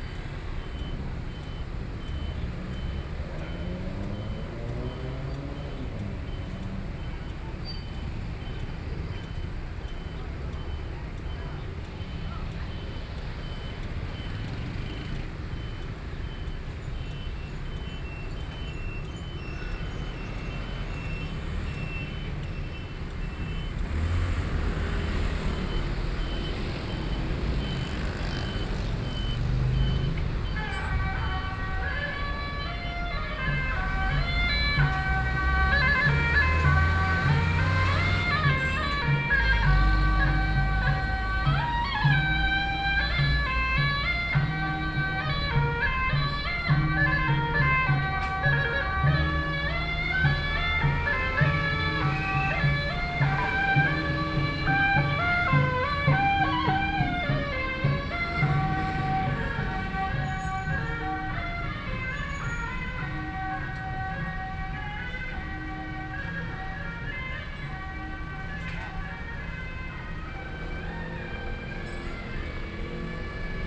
Beitou - Intersection
Traffic Noise, Traditional FestivalsSony, PCM D50 + Soundman OKM II